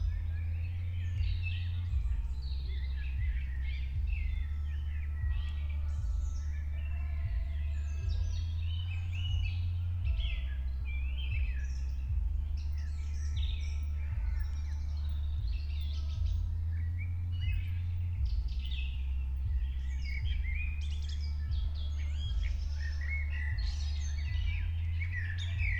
Berlin, Königsheide, Teich - pond ambience /w frogs and remains of a rave
04:00 Berlin, Königsheide, Teich - pond ambience. Somewhere nearby a rave happend the night before, still music and people around.